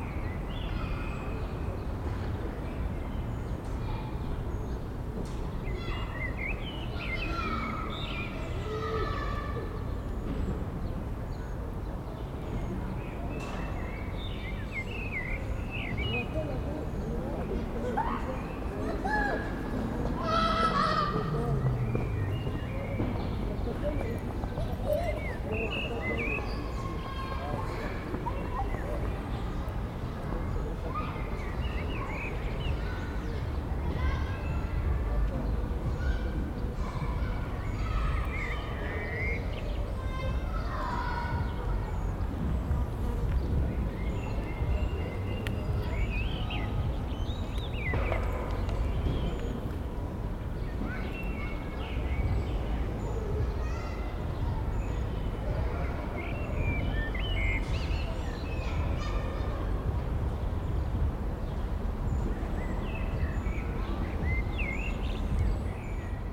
Paysage sonore du parc du Verney à Chambéry, au printemps.
France métropolitaine, France, 8 April 2019, 18:20